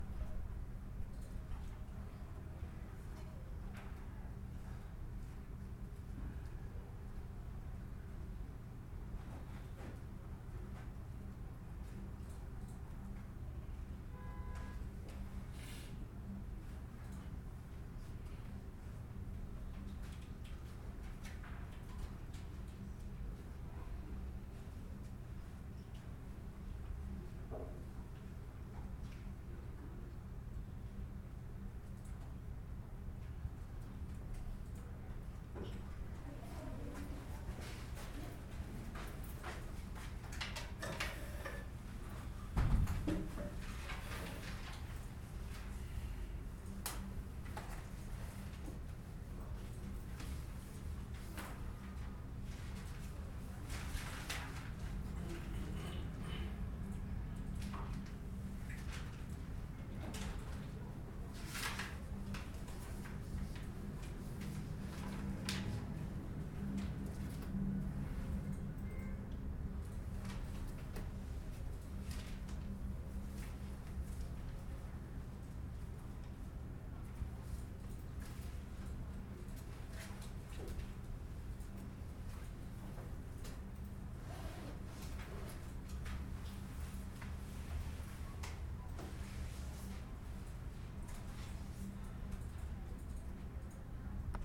Inside The Queens Library (main branch) "Quiet Room" designated for studying and reading.
NY, USA